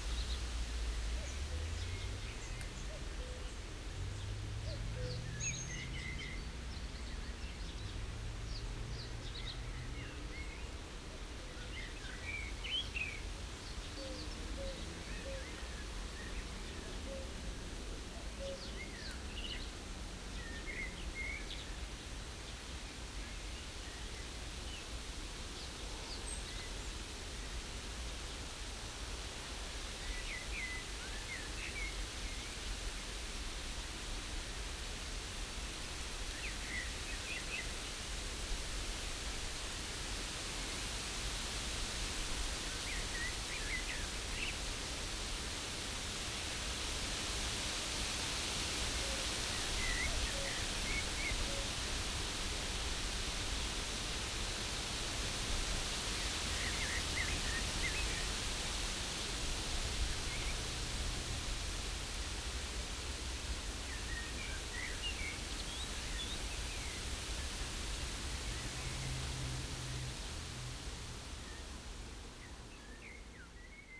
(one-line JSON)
{"title": "Jelena-Santic-Friedenspark, Marzahn, Berlin - wind in reed, cuckoo calling", "date": "2015-05-23 18:55:00", "description": "on a wooden bridge over the river Wuhle, wind in reed, call of a cuckoo\n(SD702, DPA4060)", "latitude": "52.53", "longitude": "13.59", "altitude": "41", "timezone": "Europe/Berlin"}